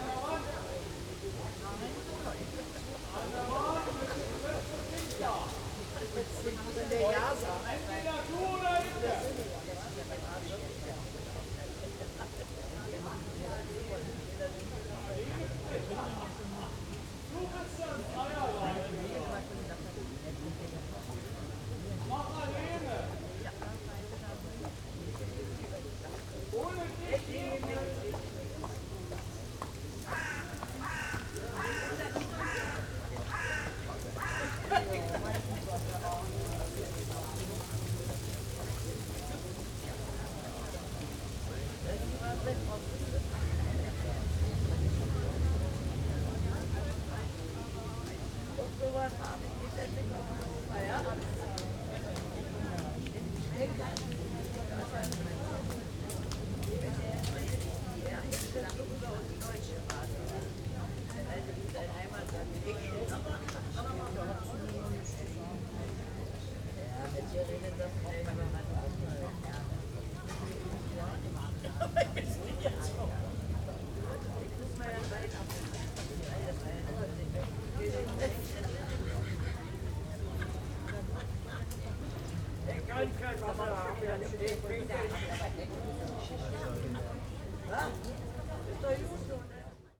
square near liepschitzalle, gropiusstadt, ambience
Berlin, Germany, 6 August 2011